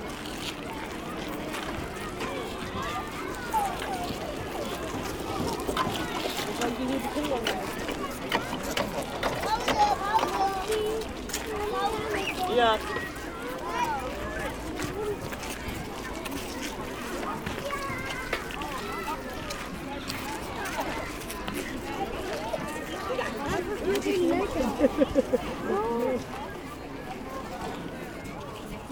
Hamburg, Deutschland - Children playing with water
Planten un Blomen, Großer spielplatz. Into the huge botanic garden of Hamburg, a colossal amount of children playing in the park.
Hamburg, Germany, 19 April